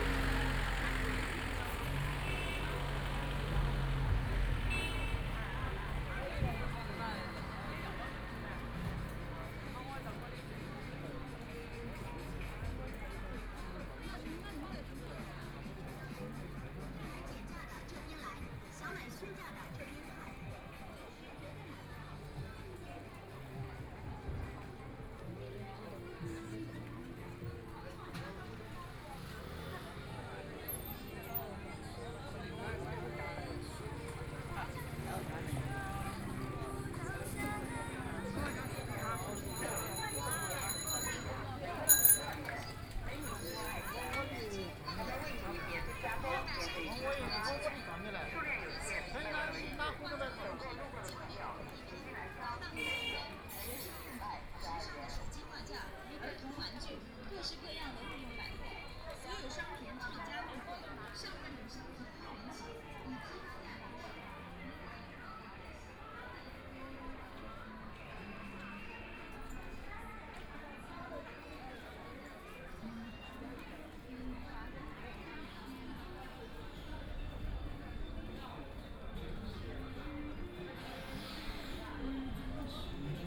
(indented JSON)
{
  "title": "Fangbang Road, Shanghai - walking in the street",
  "date": "2013-11-25 14:37:00",
  "description": "Line through a variety of shops, Traffic Sound, Walking inside the old neighborhoods, Binaural recording, Zoom H6+ Soundman OKM II",
  "latitude": "31.23",
  "longitude": "121.49",
  "altitude": "8",
  "timezone": "Asia/Shanghai"
}